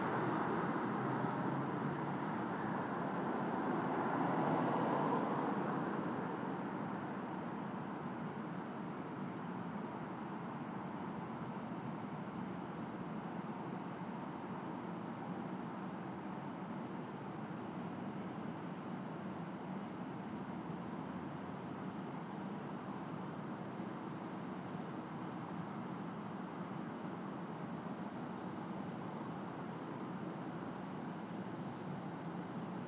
Avro Way
Cars, tree leafs